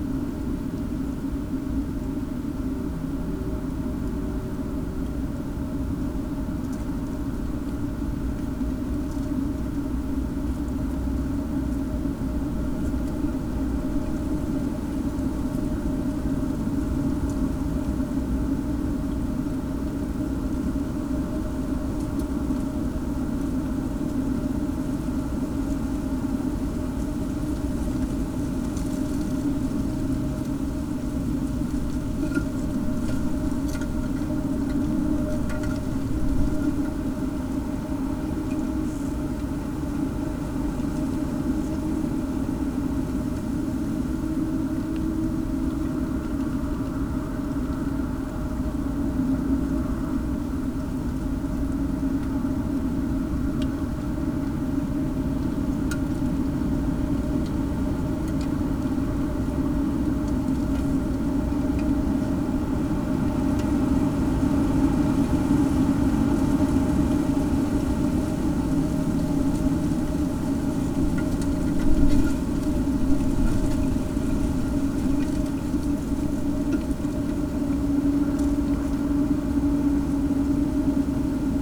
found object: rusty bucket in little streamlet. microphones placed inside...
4 November, 16:17